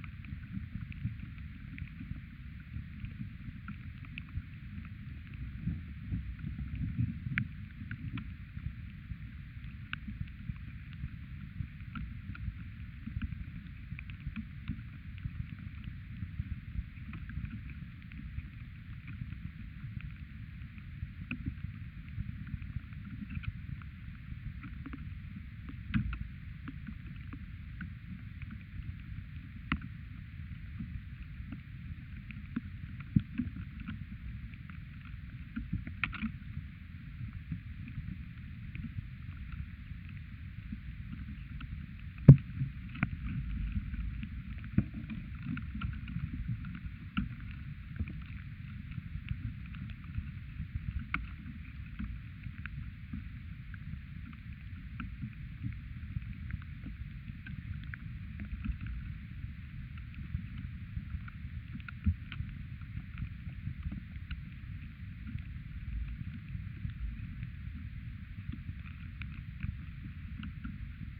contact with stone, Vyzuonos, Lithuania
ancient heathen cult place with stones. contact microphone on stone just under the moss
Utenos apskritis, Lietuva